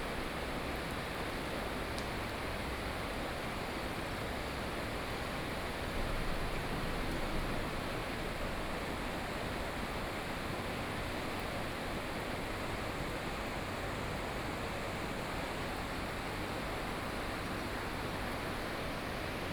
安農溪, 三星鄉大隱村 - Under the bridge
Sound streams, Under the bridge, Small village, Traffic Sound
Sony PCM D50+ Soundman OKM II
Yilan County, Sanxing Township, 大埔, 25 July 2014